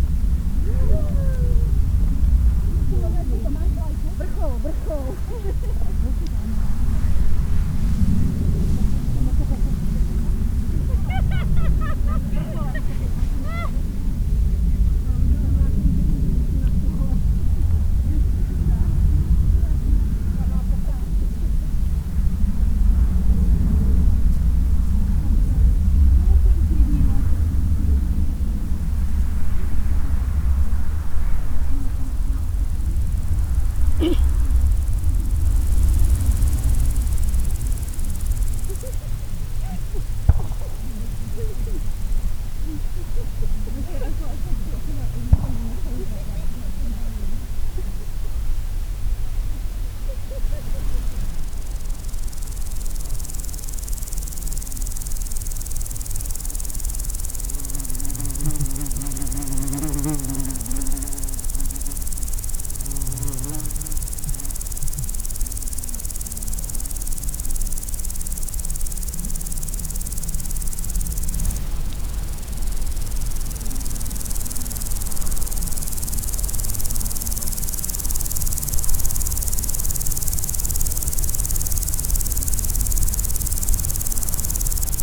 {"title": "Worcestershire Beacon, Malvern Hills, UK - Beacon", "date": "2018-07-11 13:28:00", "description": "Voices of tourists, a high jet and swirling winds recorded by placing the mics deep into the grass on the highest peak in the Malvern Hills. The distant traffic and other sounds are almost a mile away and 1000 feet lower on either side of the hills.\nMixPre 3 with 2 x Rode NT5s.", "latitude": "52.11", "longitude": "-2.34", "altitude": "398", "timezone": "Europe/London"}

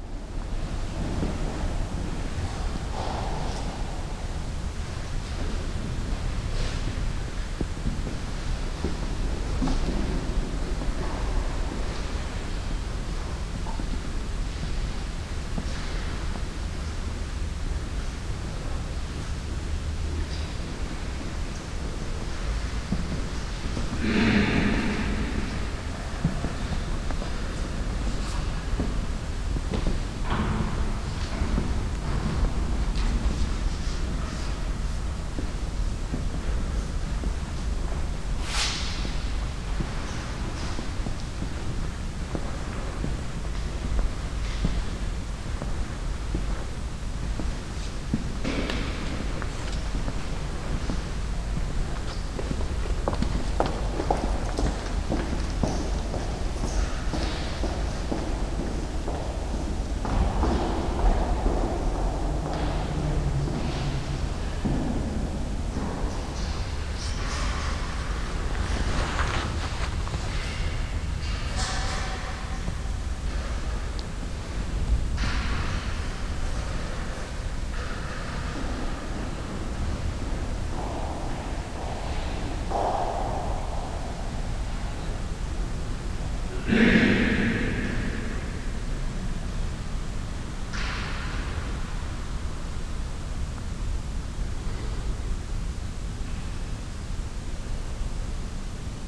{"title": "essen, hohe domkirche, inside church", "date": "2011-05-31 17:32:00", "description": "Im Kirchenraum des Doms. Der Klang einiger Schritte und das leise Flüstern von Besuchern, eine Tür, in der die Architektur bestimmenden Gesamtstille des Raumes.\nInside the church hall. Some steps and silent whispers of the visitors, a door in the overall silence of the place.\nProjekt - Stadtklang//: Hörorte - topographic field recordings and social ambiences", "latitude": "51.46", "longitude": "7.01", "timezone": "Europe/Berlin"}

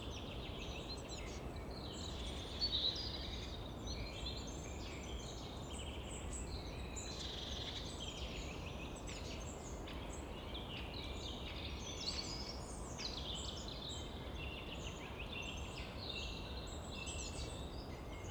{"title": "Ahrensfelde, Deutschland - small forest, ambience", "date": "2015-03-28 15:10:00", "description": "source of the river Wuhle, and also a start of a project about this river, which flows 15km through Berlin until it runs into the river Spree.\n(SD702, AT BP4025)", "latitude": "52.60", "longitude": "13.57", "altitude": "66", "timezone": "Europe/Berlin"}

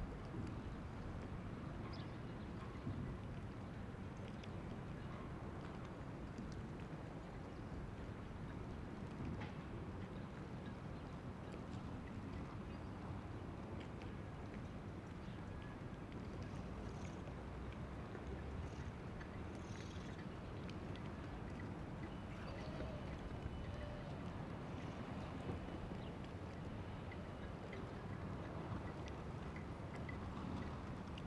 {"title": "Avenue Michel Crépeau, La Rochelle, France - Bassin des Chalutiers La Rochelle 8 am", "date": "2020-04-28 07:49:00", "description": "P@ysage Sonore La Rochelle . awakening of ducks at 4'23 . Bell 8 am at 9'27 .\n4 x DPA 4022 dans 2 x CINELA COSI & rycote ORTF . Mix 2000 AETA . edirol R4pro", "latitude": "46.15", "longitude": "-1.15", "altitude": "5", "timezone": "Europe/Paris"}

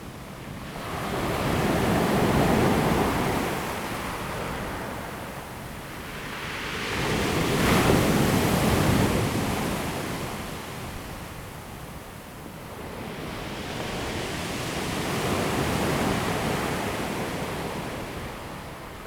{"title": "大鳥村, Dawu Township - In the beach", "date": "2014-09-05 16:37:00", "description": "Sound of the waves, In the beach, The weather is very hot\nZoom H2n MS +XY", "latitude": "22.39", "longitude": "120.92", "timezone": "Asia/Taipei"}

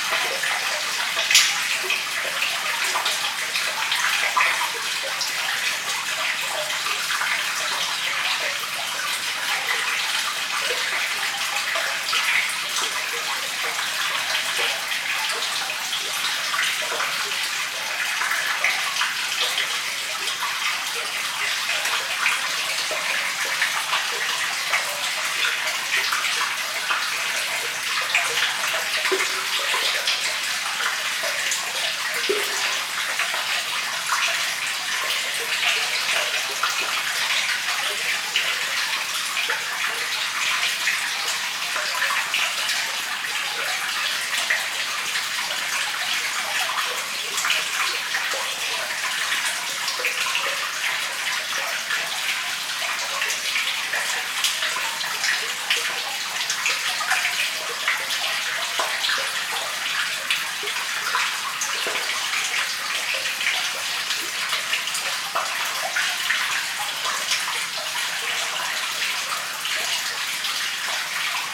{"title": "Chilcompton, Radstock, Somerset, UK - Stream Culvert", "date": "2016-05-02 12:26:00", "description": "Outflow of stream culvert.Sony PCM-D50", "latitude": "51.25", "longitude": "-2.52", "altitude": "174", "timezone": "Europe/London"}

